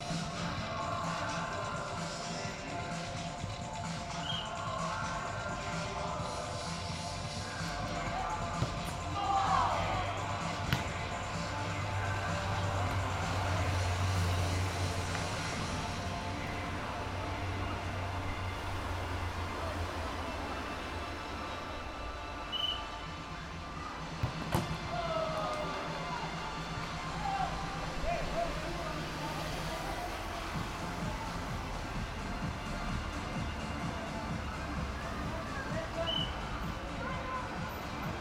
Rijeka, OS NT, Football for park